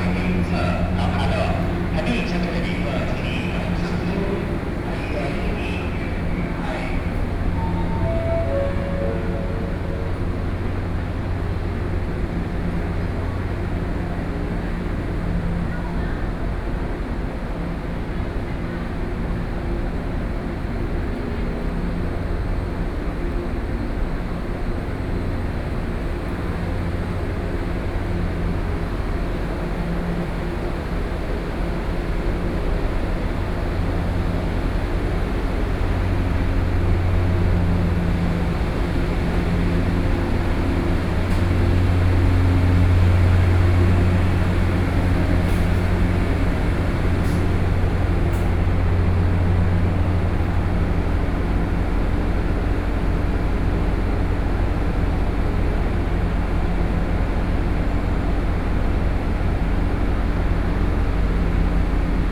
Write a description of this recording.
Walking in the station, From the station hall, Through the underpass, Then toward the station platform